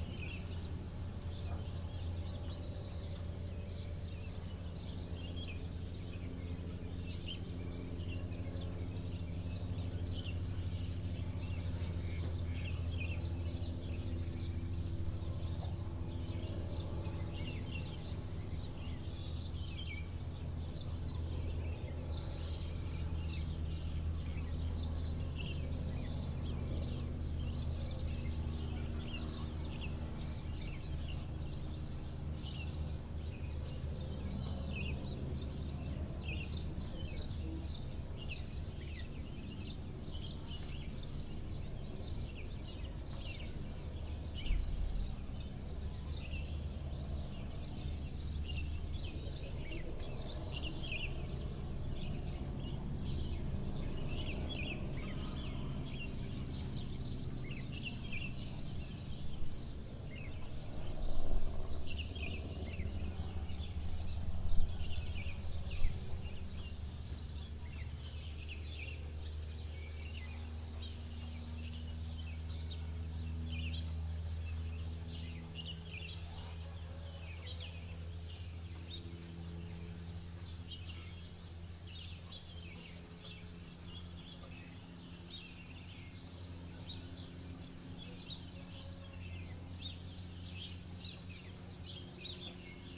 CILAOS centre - 20181101 0827-CILAOS ambiance sonore du au tourisme
Le problème c'est que c'est intermittent durant 5 à 7h de temps tout de même, plutôt que regroupé durant une tranche horaire limitée: attendre que ça passe signifie renoncer à la matinée entière et le calme durable ne revient jamais vraiment avant qu'il fasse couvert.
Cette ambiance sonore provoque un cumul de dégâts sur la nature et la société:
1: ça empêche les oiseaux endémiques de communiquer et défendre convenablement leur territoire en forêt face à une concurrence, en particulier avec le merle-maurice mieux adapté qu'eux au bruit: cet avantage ainsi donné au merle-maurice aide encore un peu plus les plantes envahissantes qui mettent en danger la forêt primaire.
2: ça induit un tourisme agressif et saccageur qui se ressent au sentier botanique. En présence d'un tel vacarme personne n'a idée de calmer des enfants qui crient ou d'écouter les oiseaux: le matin les familles avec enfants font beaucoup plus de dégâts car la nature n'est qu'un défouloir et rien d'autre.